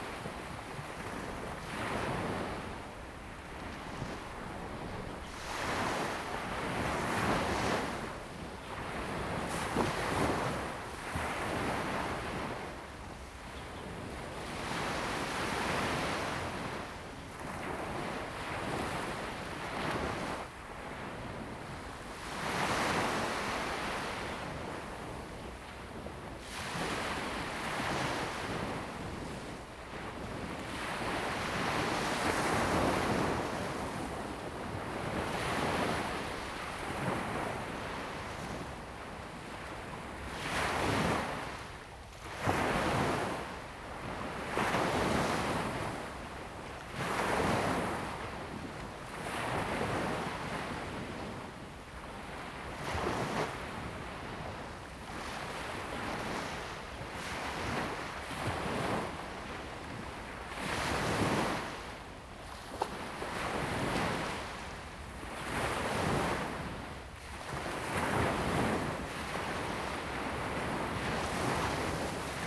貓公石沙灘, Lieyu Township - At the beach
At the beach, Sound of the waves
Zoom H2n MS +XY
金門縣 (Kinmen), 福建省, Mainland - Taiwan Border, 4 November 2014